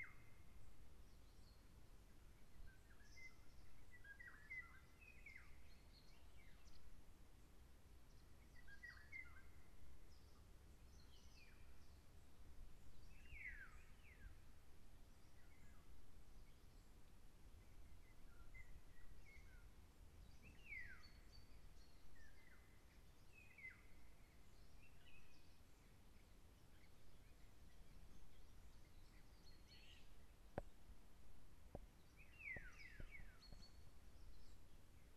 Gmina Lubiszyn, Polen - Palace
At a beautiful former hunting palace in the midst of the woods, a bird with a peculiar cry sang for us.
Poland, 27 July 2013, 17:00